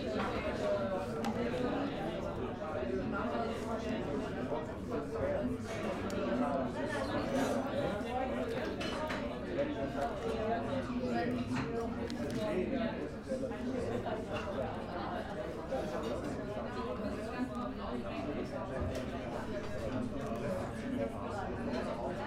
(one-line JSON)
{"title": "Stephansdom, Wien, Austria - Alt Wien", "date": "2017-01-22 19:05:00", "latitude": "48.21", "longitude": "16.38", "altitude": "186", "timezone": "GMT+1"}